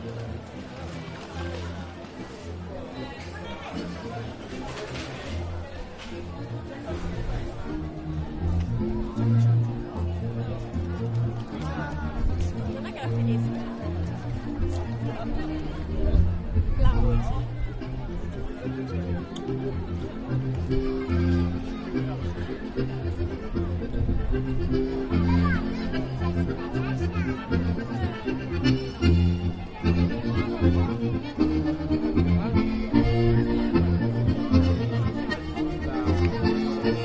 M.Lampis: Cabras - The Bottarga festival